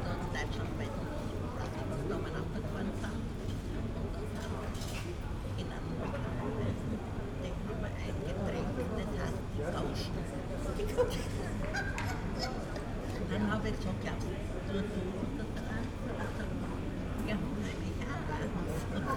{"title": "Sackstr./Hauptplatz, Graz, Austria - sunday afternoon street cafe ambience", "date": "2012-09-02 15:30:00", "description": "street cafe ambience in narrow street, tram passing very close, 3 older ladies chatting\n(PCM D-50, DPA4060)", "latitude": "47.07", "longitude": "15.44", "altitude": "365", "timezone": "Europe/Vienna"}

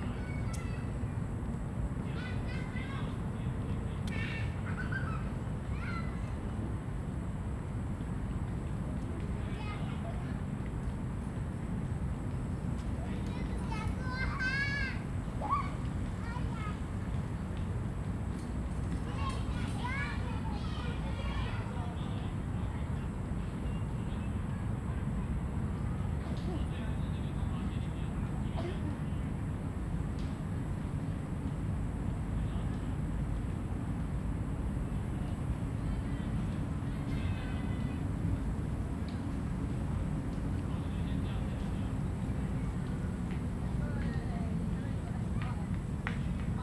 {"title": "大安森林公園, 大安區 Taipei City - Night in the park", "date": "2015-06-28 19:20:00", "description": "in the Park, Traffic noise\nZoom H2n MS+XY", "latitude": "25.03", "longitude": "121.54", "altitude": "6", "timezone": "Asia/Taipei"}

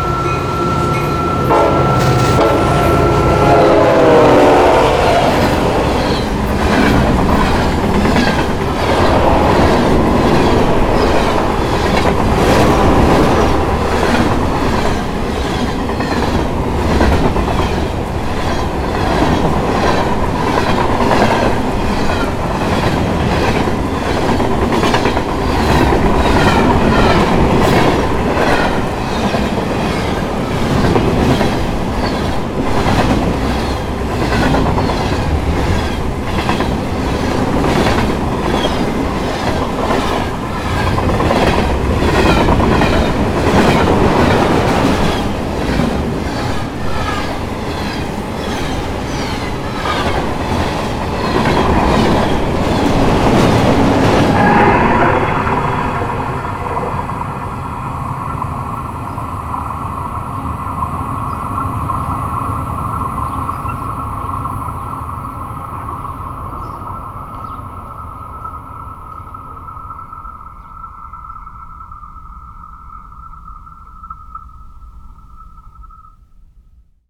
{"title": "Estación Wadley, Mexico - Train passing by in the Wirikuta Desert (Mexico, SLP)", "date": "2012-07-17 12:00:00", "description": "A train is passing by in the small town of Wuadley in the Wirikuta Desert (Mexico, State of San Luis Potosi SLP). Train horn and railway vibration at the beginning (recorded by the contact microphone).\nSound recorded by a MS setup Schoeps mixed with a contact microphone\nMicrophone CCM41+CCM8\nContact Microphone Aquarian H2aXLR\nSound Devices 744T recorder\nMS is encoded in STEREO Left-Right and mixed with the Contact Microphone\nrecorded in july 2012", "latitude": "23.65", "longitude": "-100.99", "altitude": "1819", "timezone": "GMT+1"}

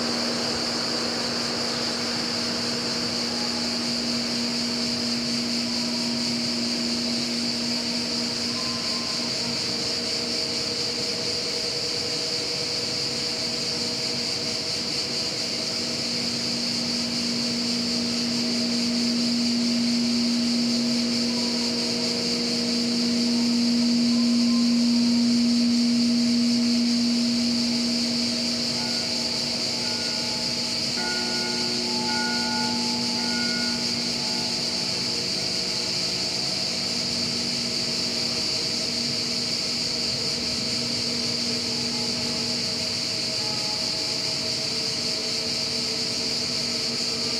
{"title": "Saintes-Maries-de-la-Mer, Frankreich - Château d'Avignon en Camargue - Ambience 'Le domaine des murmures # 1'", "date": "2014-08-14 13:39:00", "description": "Château d'Avignon en Camargue - Ambience 'Le domaine des murmures # 1'.\nFrom July, 19th, to Octobre, 19th in 2014, there is a pretty fine sound art exhibiton at the Château d'Avignon en Camargue. Titled 'Le domaine des murmures # 1', several site-specific sound works turn the parc and some of the outbuildings into a pulsating soundscape. Visitors are invited to explore the works of twelve different artists.\nIn this particular recording, you will notice sounds from different works by Julien Clauss, Emma Dusong, Arno Fabre, and Franck Lesbros, the drone of the water pump from the nearby machine hall as well as the sonic contributions of several unidentified crickets, and, last but not least, the total absence of sound from an installation by Emmanuel Lagarrigue in the machine hall.\n[Hi-MD-recorder Sony MZ-NH900, Beyerdynamic MCE 82]", "latitude": "43.56", "longitude": "4.41", "altitude": "12", "timezone": "Europe/Paris"}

